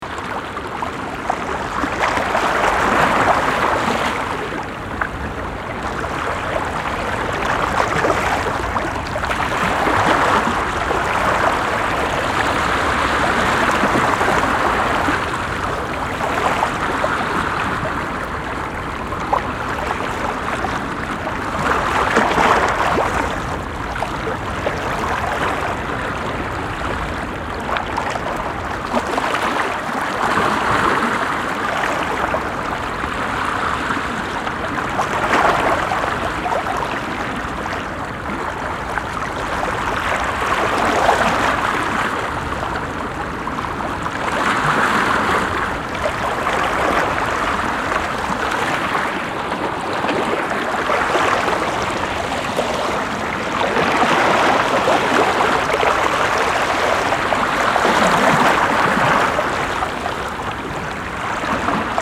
Karmøy, Norwegen - Norway, Akresand, beach, water rock
At Akrasand beach on a mild windy summer day. The sound of water waves rushing to the hear stoney obersprung. In the distance the gurgeling sound of a water maelstrom.
-international sound scapes - topographic field recordings and social ambiences